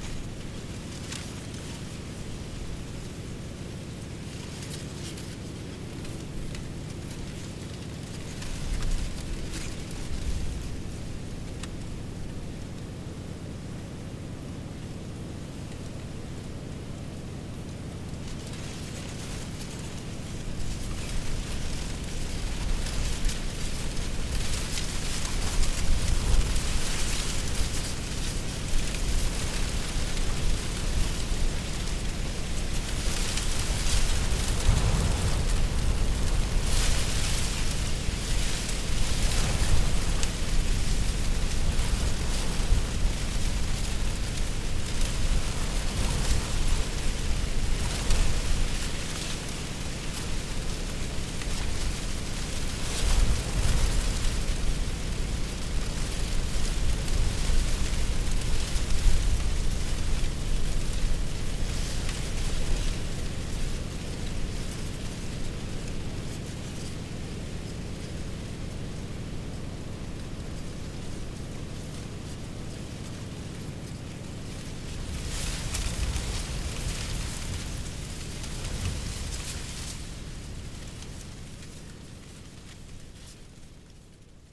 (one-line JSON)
{"title": "Warren Landing Rd, Garrison, NY, USA - Wind over the Tidal Marsh", "date": "2020-02-29 15:00:00", "description": "Recording made overlooking the Hudson River tidal marsh part of the Constitution Marsh Audubon Center and Sanctuary.\nSounds of dry leaves rustling in the wind, and the sound of a distant the Amtrak train horn.\nThis tidal marsh is a vital natural habitat for many species of wildlife and is a significant coastal fish habitat and a New York State bird conservation area.", "latitude": "41.40", "longitude": "-73.94", "altitude": "5", "timezone": "America/New_York"}